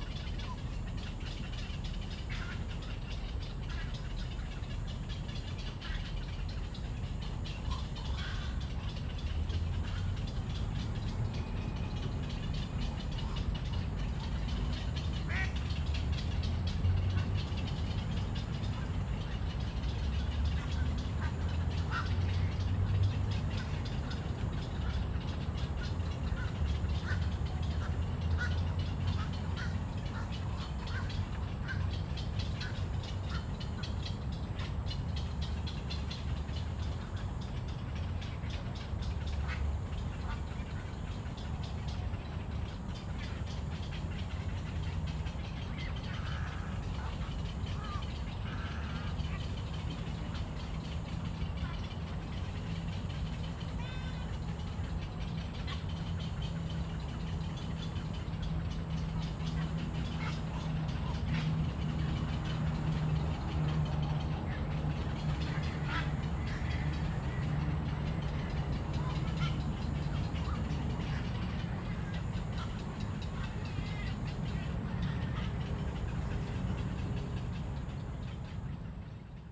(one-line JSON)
{"title": "大安森林公園, Da'an District, Taiwan - Bird calls", "date": "2015-06-28 19:41:00", "description": "Bird calls, in the Park, Traffic noise\nZoom H2n MS+XY", "latitude": "25.03", "longitude": "121.54", "altitude": "13", "timezone": "Asia/Taipei"}